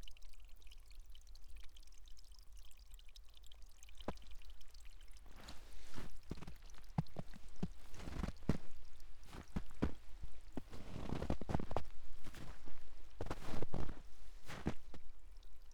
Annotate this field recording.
getting dark already, with grey purple sky above the trees